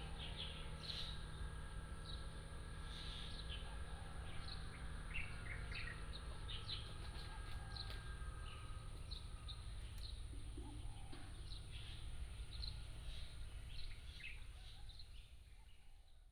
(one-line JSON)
{"title": "全德國小, Hsiao Liouciou Island - Birds singing", "date": "2014-11-02 07:26:00", "description": "Birds singing, Crowing sound", "latitude": "22.35", "longitude": "120.37", "altitude": "38", "timezone": "Asia/Taipei"}